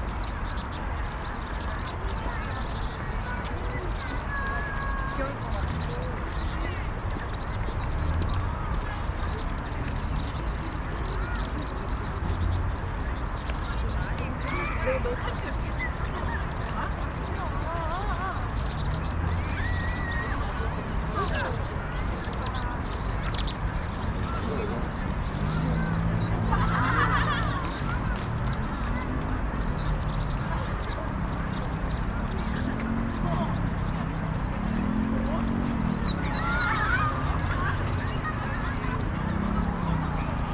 대한민국 서울특별시 서초구 잠원동 122-1번지 - Banpo Hangang Park, Birds Chirping
Banpo Hangang Park, Birds Chirping
반포한강공원, 새떼 지저귐
20 October, 20:07